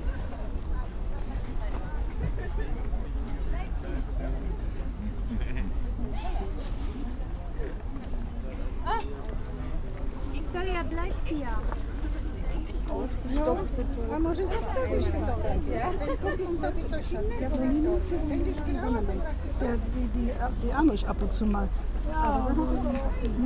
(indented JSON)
{
  "title": "Füsseldorf, fair, parking area, flee market - düsseldorf, fair, parking area, flee market",
  "date": "2009-07-05 11:59:00",
  "description": "sunday flee market atmo plus aeroplane landing approach\nsoundmap nrw: social ambiences/ listen to the people in & outdoor topographic field recordings",
  "latitude": "51.27",
  "longitude": "6.72",
  "altitude": "34",
  "timezone": "Europe/Berlin"
}